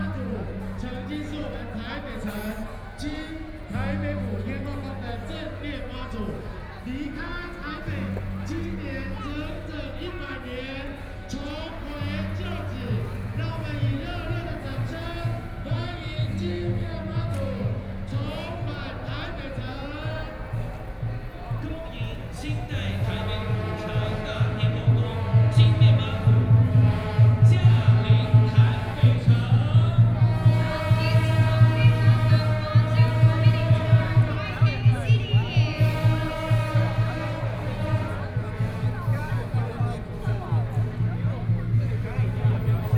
Yanping S. Rd., Taipei City - Traditional Festivals
Traditional Festivals, Mazu (goddess), Binaural recordings, Zoom H6+ Soundman OKM II